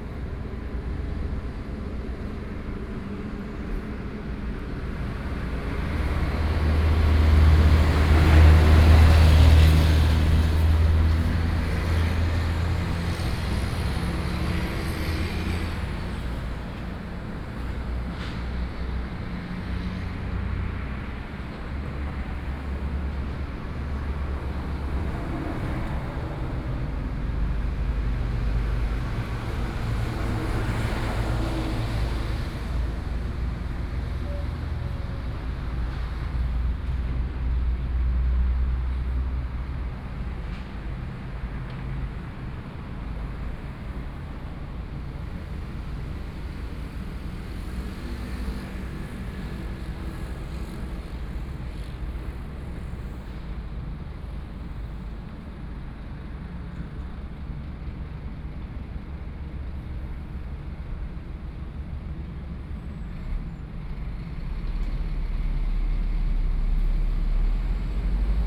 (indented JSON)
{
  "title": "Nanbin Rd., Hualien City - Traffic Noise",
  "date": "2013-11-05 13:21:00",
  "description": "Because near the port, Many large trucks traveling through, Binaural recordings, Sony PCM D50+ Soundman OKM II",
  "latitude": "23.97",
  "longitude": "121.61",
  "altitude": "8",
  "timezone": "Asia/Taipei"
}